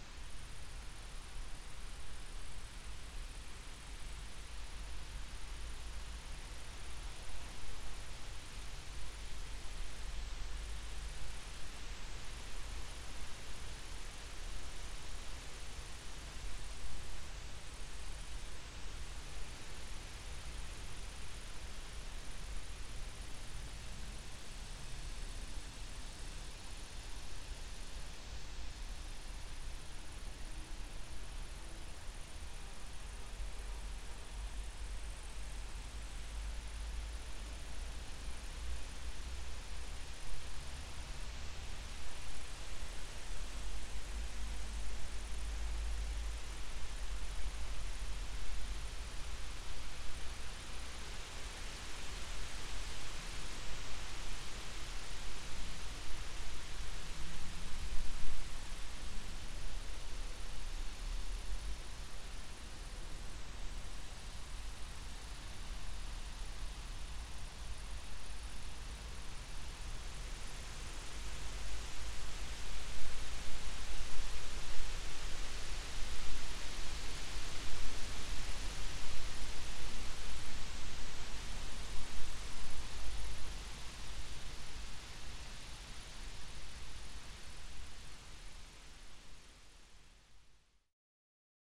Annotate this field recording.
soundscape at abandoned fountain